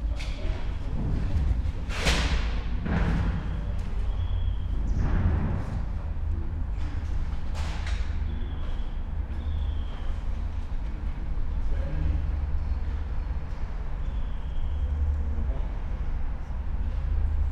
walk in the yard of Aix-en-Provence artschool, following a bird with no success
(PCM D50, PrimoEM172)